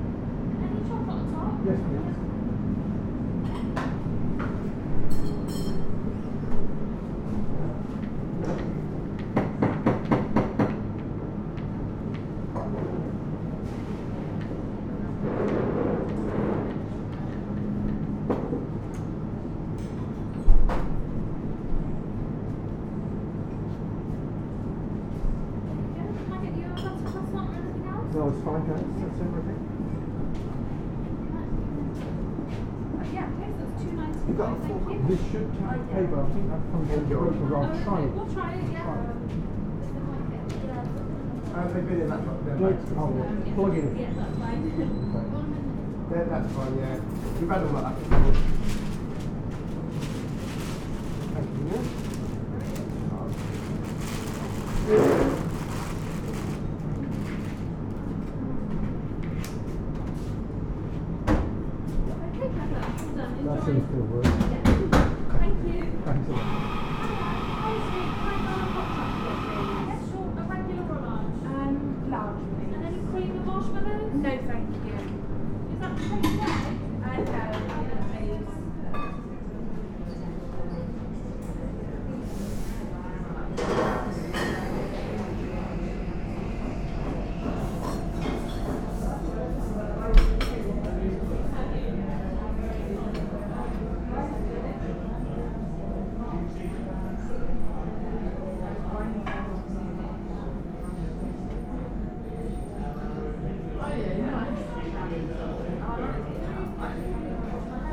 Sound Walk Malvern Shopping Area.
A short sound walk through a busy shopping area with road works into a coffe shop.
MixPre 6 II with 2 Sennheiser MKH 8020s.